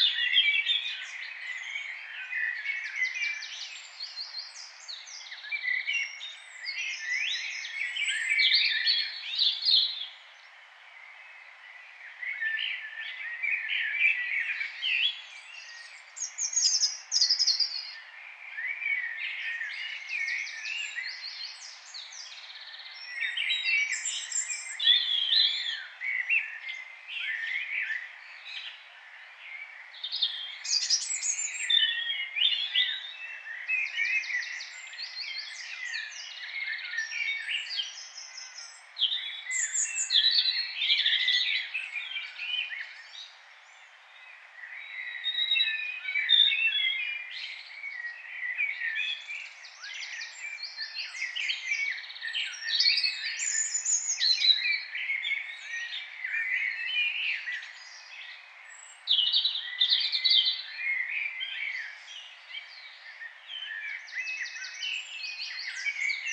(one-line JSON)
{"title": "St Barnabas Rd, Cambridge, UK - Dawn chorus circa June 1998", "date": "1998-06-01 04:30:00", "description": "Dawn chorus, garden of 9 St Barnabas Rd, circa June 1998. Recorded with Sony Pro Walkman and ECM-929LT stereo mic.", "latitude": "52.20", "longitude": "0.14", "altitude": "20", "timezone": "Europe/London"}